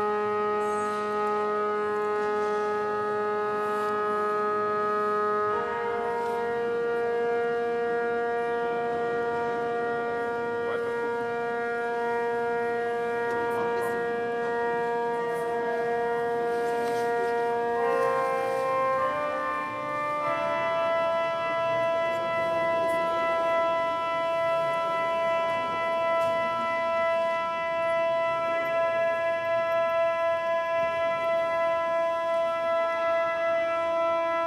Miðbær, Reykjavik, Iceland - Tuning of church organ
Accidental microtonal composition
July 2013